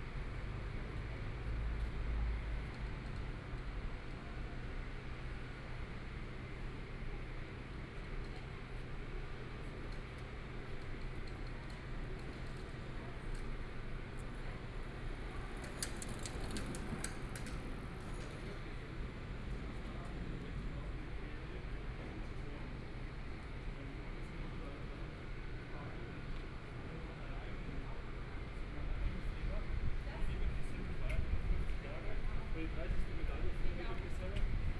schönfeldsraße 19 rgb., Munich - At the roadside
At the roadside
Munich, Germany, 10 May 2014, 16:24